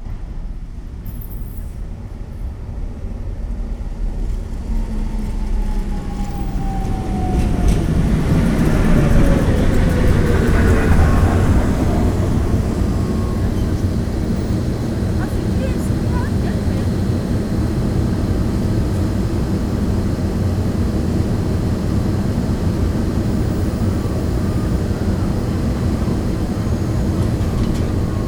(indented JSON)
{
  "title": "Tallinn, Volta",
  "date": "2011-07-04 23:40:00",
  "description": "tram station volta, tallinn. ambience at night",
  "latitude": "59.44",
  "longitude": "24.72",
  "timezone": "Europe/Tallinn"
}